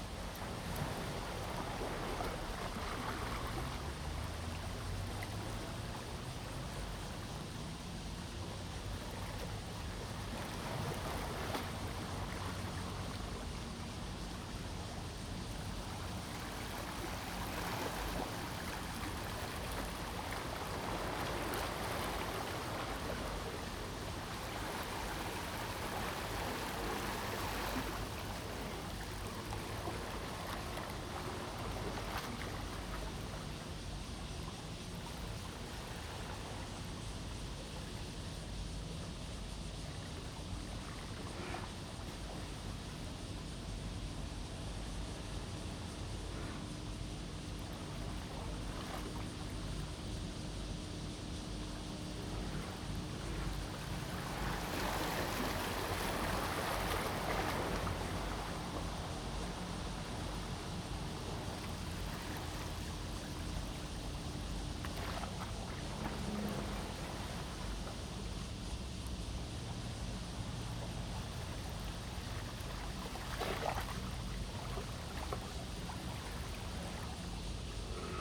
Tide, Fishing port
Zoom H2n MS+XY
Tamsui District, New Taipei City, Taiwan, 18 July 2015